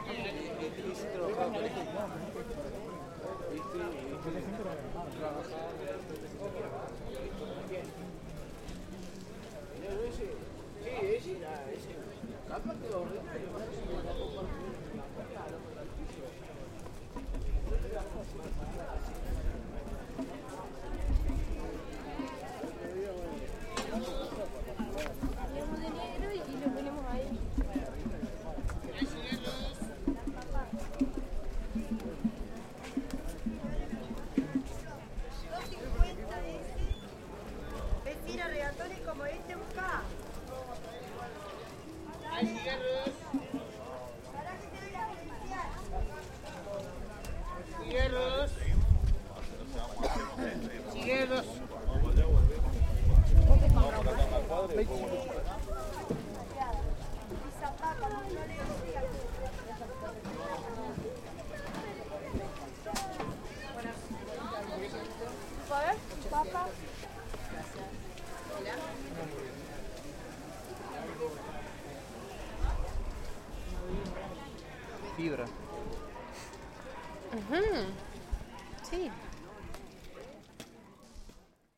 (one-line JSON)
{"title": "Feria de alimentos, Montevideo, Uruguay - cuánto es?", "date": "2011-03-27 13:44:00", "description": "The market is getting crowded and busy. You can buy vegetables, fruits and meet.", "latitude": "-34.90", "longitude": "-56.18", "altitude": "34", "timezone": "America/Montevideo"}